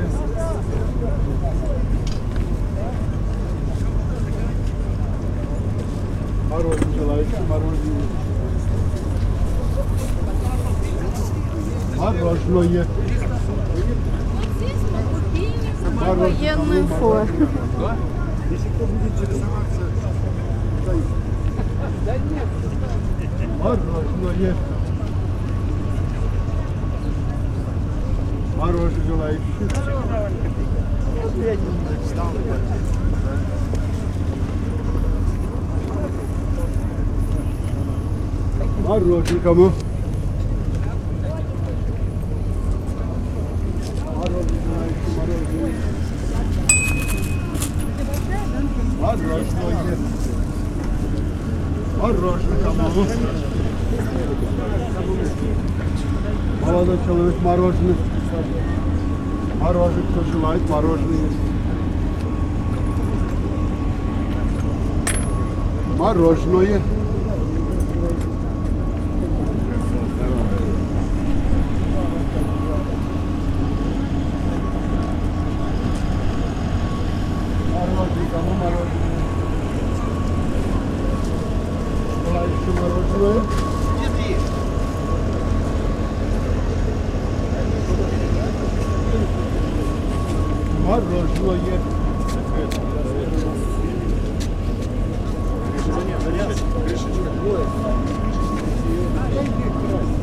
Minsk, Zhdanovichi market - Morozhenoe
An icecream vendor roaming around the 'Fields of Wonder' (Поля чудес) at Zhdanovichi market